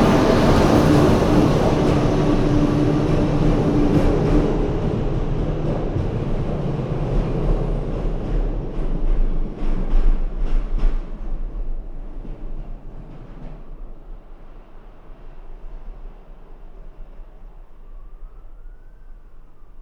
Trains inside the tunnel next to Prague main station